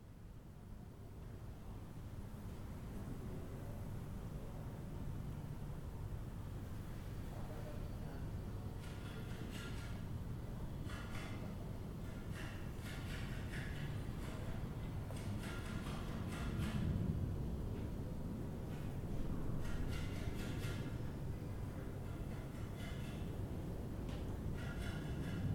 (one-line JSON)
{"title": "Rue de Fürstenberg, Paris, France - In the Garden at the Delacroix Museum", "date": "2019-07-19 13:15:00", "description": "Recorded while sitting and sketching in the garden of the Musée National Eugène-Delacroix in Paris. This was where French painter Eugène Delacroix lived from 1858 to 1863.", "latitude": "48.85", "longitude": "2.34", "altitude": "45", "timezone": "Europe/Paris"}